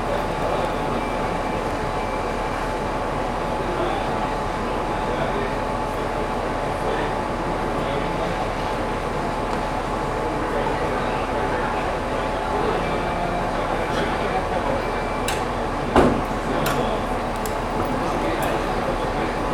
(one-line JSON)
{"title": "Train station, Nova Gorica, Slovenia - The sounds on the train station", "date": "2017-06-10 17:25:00", "description": "Waiting for the train to leave the station. But the train never leaves.", "latitude": "45.96", "longitude": "13.64", "altitude": "89", "timezone": "Europe/Ljubljana"}